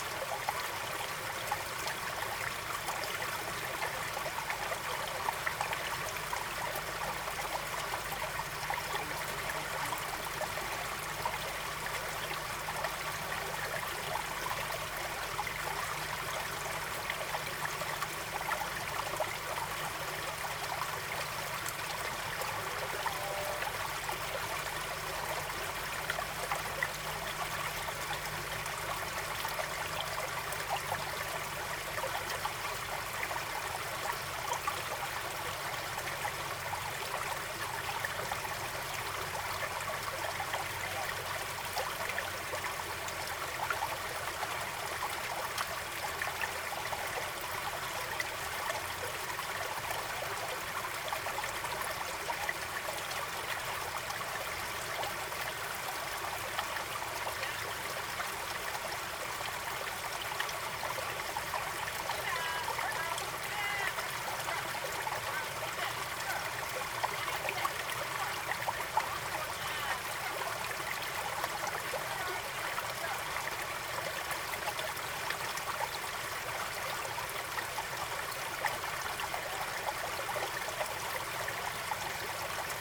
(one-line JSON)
{"title": "Austin, TX, USA - Gurgling Spring & Faint Conversation", "date": "2015-08-17 07:30:00", "description": "Recorded with a Marantz PMD661 and a pair of DPA4060s.", "latitude": "30.28", "longitude": "-97.78", "altitude": "171", "timezone": "America/Chicago"}